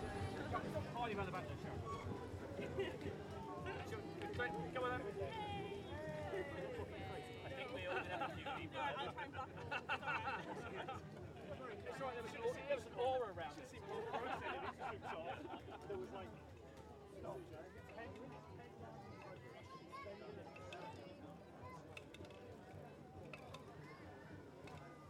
soundscape, processions, field recording
Tolpuddle, Dorset, UK, 18 July 2010